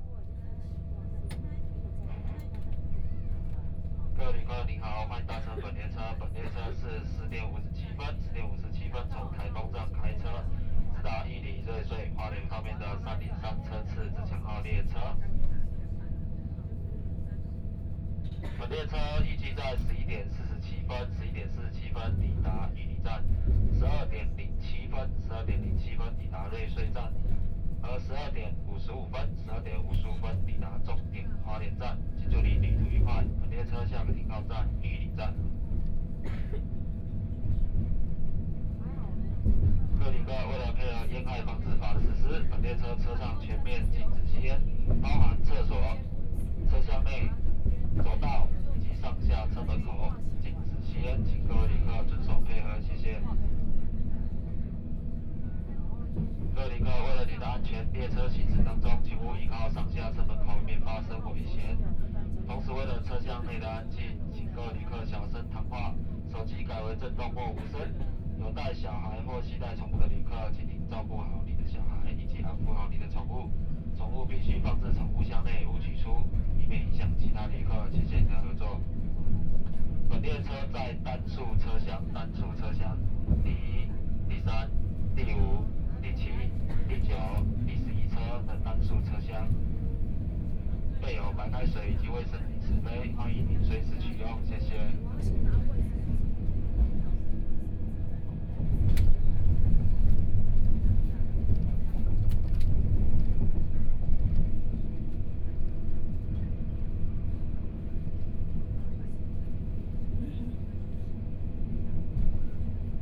Beinan Township, Taitung County - Taroko Express
Train message broadcasting, Interior of the train, from Taitung Station to Shanli Station, Binaural recordings, Zoom H4n+ Soundman OKM II
Beinan Township, 東46鄉道, 18 January 2014, 11:12